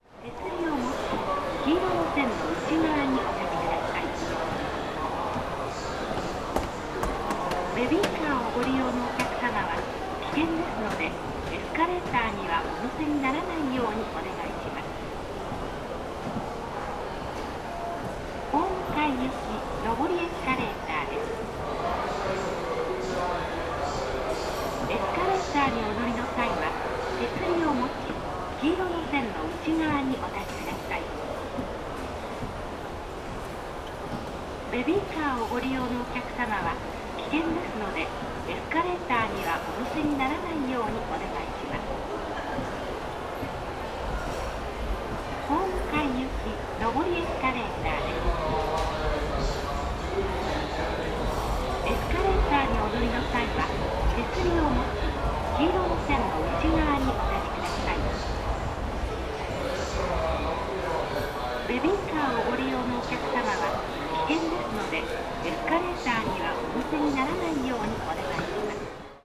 Osaka Station, escalator by baggage lockers - escalator voice
the voice comes from inside an escalator wall, no speaker to be seen. faint thumps of escalator steps. a jumbled space of hundreds passengers voices and announcements in the background. no one pays attention to what the escalator has to say.
近畿 (Kinki Region), 日本 (Japan), 31 March 2013, 18:53